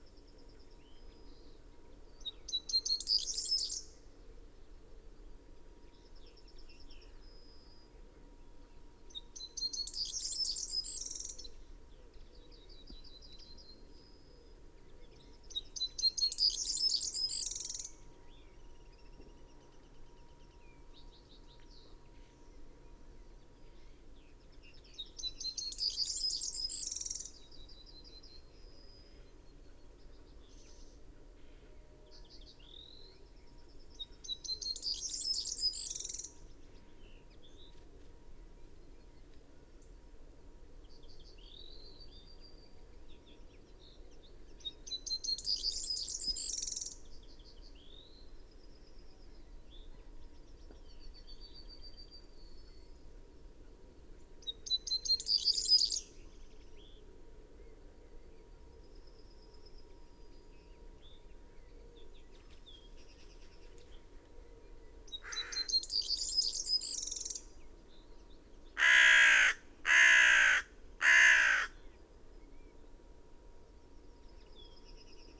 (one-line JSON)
{"title": "Malton, UK - temporary neighbours ...", "date": "2022-07-15 05:45:00", "description": "temporary neighbours ... corn bunting and a crow ... dpa 4060s in parabolic to mixpre3 ... bird song ... calls ... from ... yellowhammer ... linnet ... wood pigeon ... blue tit ... blackbird ... pheasant ... background noise ...", "latitude": "54.12", "longitude": "-0.55", "altitude": "84", "timezone": "Europe/London"}